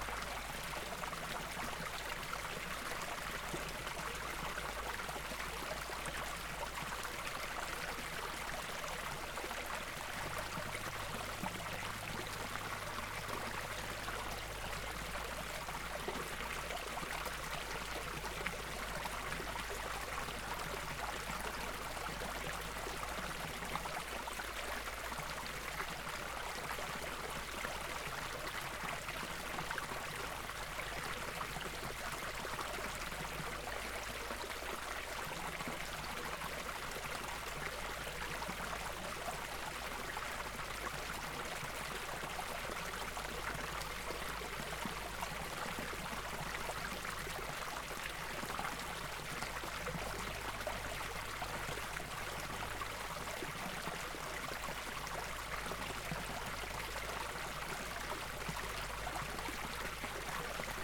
Punnetts Town, UK - Flitterbrook Stream, East Sussex
This small stream is located in a ghyll - a small but deep wooded valley in East Sussex. A public footpath bisects the stream and is crossed by a small bridge. The recorder was placed close to two small drops in the sandstone stream bed. We haven't had much rain recently so the flow was fairly light. There were several Blackbirds 'chinking' nearby. Tascam DR-05 with wind muff.